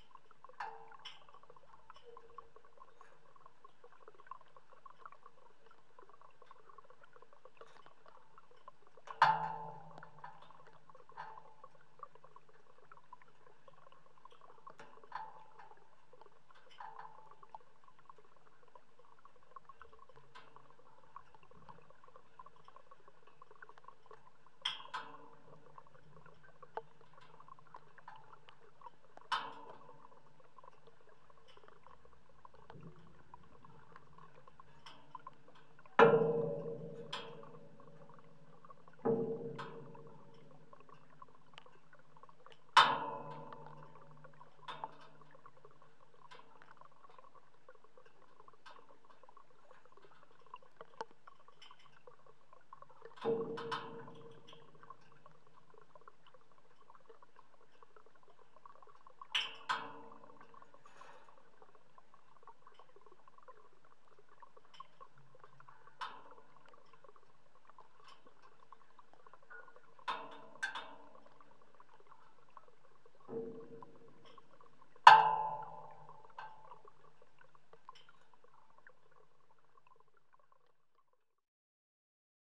Grybeliai, Lithuania, metallic bridge constructions
frozen pond and metallic bridge construction on it
26 February 2018